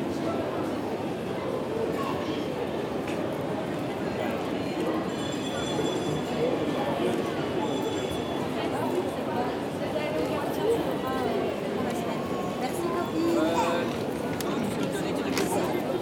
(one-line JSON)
{"title": "Charleroi, Belgique - Winter games", "date": "2018-12-15 14:40:00", "description": "On a completely renewed square, there's a rink. Recording of the young people playing. After I made a walk into the shopping mall. Santa Claus is doing selfies with babies.", "latitude": "50.41", "longitude": "4.44", "altitude": "87", "timezone": "Europe/Brussels"}